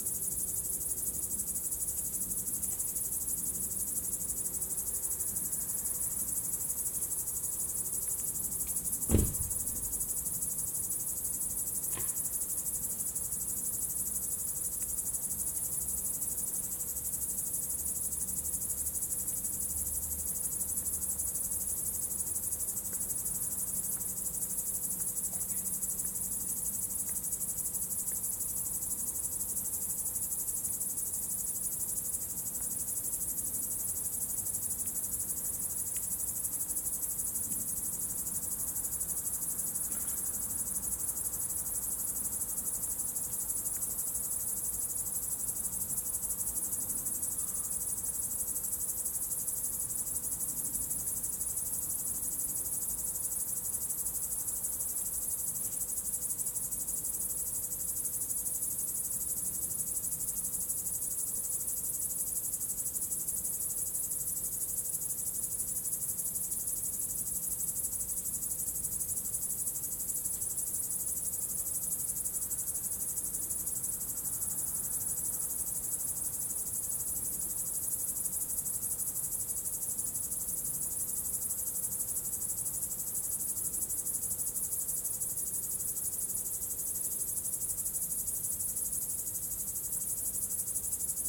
Solesmeser Str., Bad Berka, Deutschland - Binaural Sounds of Summer Nights Bad Berka
*Binaural - best listening with headphones.
This recording chronicles sounds of nature typical of summer nights juxtaposed against anthrophony. Sounds in the left and right channels exhibit acoustic energies and rhythmical textures.
In the sound: Helicopter engine, Cricket, soft winds, soft car engines in the background.
Gear: Soundman OKM with XLR and Adapter, ZOOM F4 Field Recorder.